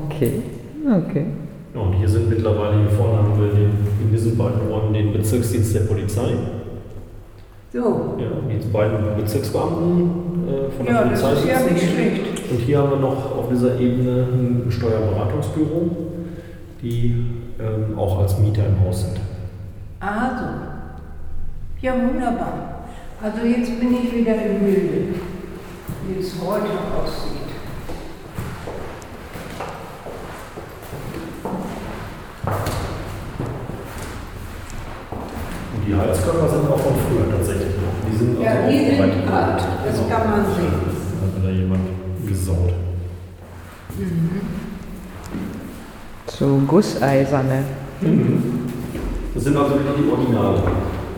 Amtshaus Pelkum, Hamm, Germany - Ilsemarie von Scheven talks local history in situ
Wir besuchen das Amtshaus Pelkum mit der ehemaligen Stadtarchivarin Ilsemarie von Scheven. Die 93-jährige führt uns entlang ihrer Erinnerungen durch das Gebäude. Treppenhaus und Flure wecken Erinnerungen; die meisten Räume weniger; Eine Reise entlang Frau von Schevens Erinnerungen in eine Zeit, als hier im Haus das Archiv der neuen kreisfreien Stadt Hamm untergebracht war bzw. unter den achtsamen Händen von zwei, Zitat von Scheven, „50-jährigen Seiteneinsteigerinnen ohne Fachausbildung“ wieder entstand; buchstäblich wie ein Phoenix aus der Asche. Ein „Ersatzarchive für die Stadt aufzubauen“ lautete der Auftrag der Frauen. Das Archiv der Stadt war in den Bomben des Zweiten Weltkriegs mit dem Stadthaus verbrannt; als einziges in Westfalen, wie Frau von Scheven betont.
Wo die Erinnerung uns verlässt, erkunden wir, was im Gebäude jetzt so alles zu finden ist. Der Bürgeramtsleiter selbst, Herr Filthaut gewährt uns Zutritt und begleitet uns.
Nordrhein-Westfalen, Deutschland, 4 November 2014